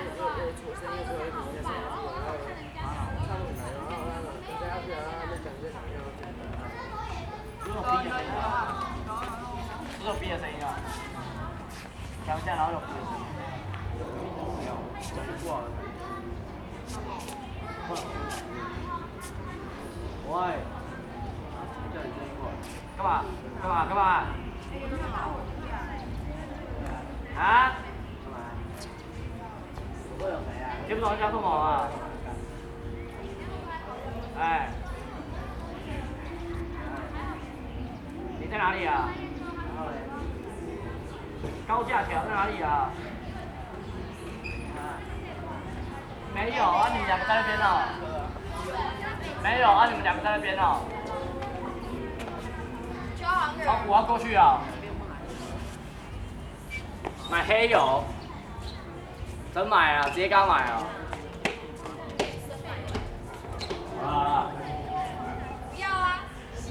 A group of students gathered noisy conversation, Sony ECM-MS907, Sony Hi-MD MZ-RH1 (SoundMap20120329- 29)